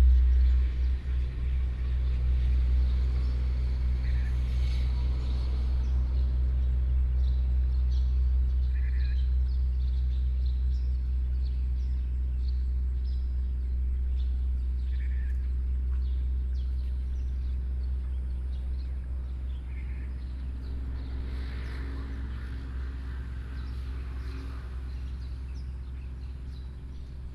美農村, Beinan Township - Birdsong
In the morning, Birdsong, Traffic Sound
Beinan Township, Taitung County, Taiwan, 7 September, 07:17